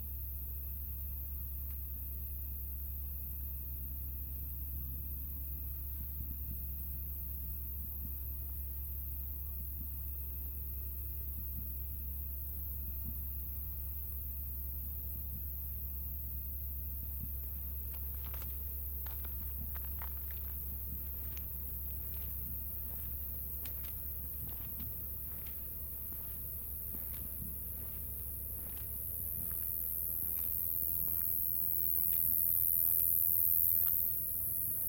R. das Portas, Portugal - Insects at night
Braga, Portugal, 2 August 2022